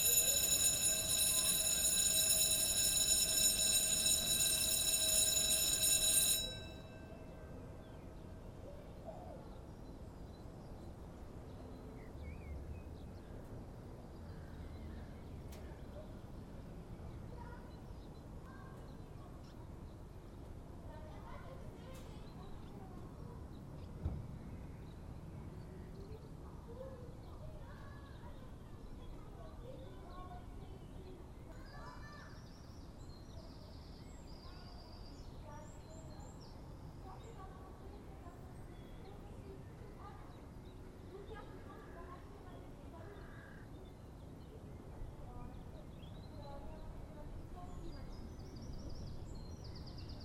Court-St.-Étienne, Belgique - Collège Saint-Etienne schoolyard
Recording of the Collège Saint-Etienne schoolyard on a sunny morning. Initially there's near nothing, just some brief and tenuous rumors. Then, the ringtone is vibrating, the first child arrives in the courtyard. A diffuse sound is gradually increasing, a long time until the last voice.
Court-St.-Étienne, Belgium, 23 May 2017